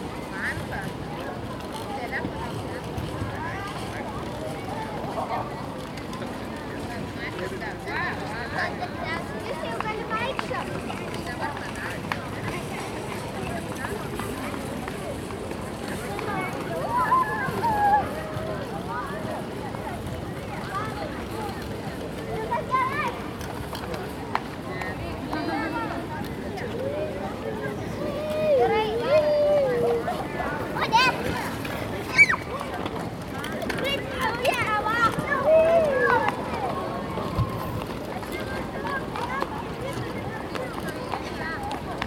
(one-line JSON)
{"title": "Nida, Lithuania - Nida Centre Sculpture", "date": "2016-08-01 20:59:00", "description": "Recordist: Saso Puckovski. Centre of Nida, public park, the recorder was placed on top of the metal sculpture on a usual day. Random tourists, people walking, bikes. Recorded with ZOOM H2N Handy Recorder, surround mode.", "latitude": "55.31", "longitude": "21.01", "altitude": "4", "timezone": "Europe/Vilnius"}